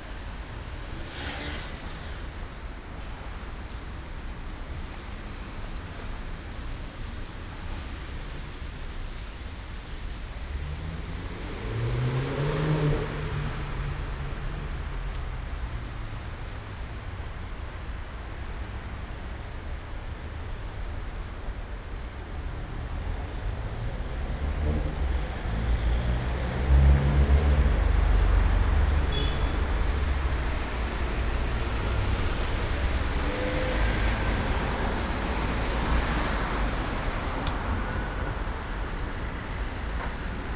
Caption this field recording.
"Saturday market without plastic waste in the time of COVID19", Soundwalk, Chapter XCII of Ascolto il tuo cuore, città. I listen to your heart, city, Saturday, May 30th 2020. Walking to Corso Vittorio Emanuele II and in outdoor market of Piazza Madama Cristina, eighty-one days after (but day twenty-seven of Phase II and day fourteen of Phase IIB and day eight of Phase IIC) of emergency disposition due to the epidemic of COVID19. Start at 2:52 p.m. end at 3:19 p.m. duration of recording 27'05'', The entire path is associated with a synchronized GPS track recorded in the (kml, gpx, kmz) files downloadable here: